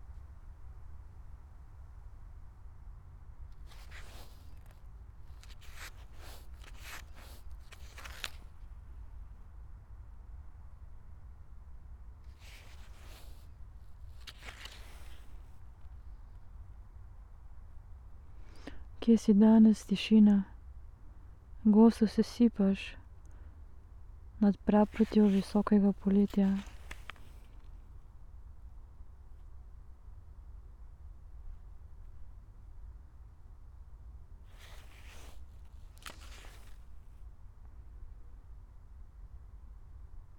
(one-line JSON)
{"title": "tree crown poems, Piramida - white fog, full moon", "date": "2013-12-18 20:13:00", "description": "variation from trieste notebook ... fragment from one hour reading performance Secret listening to Eurydice 11", "latitude": "46.57", "longitude": "15.65", "altitude": "373", "timezone": "Europe/Ljubljana"}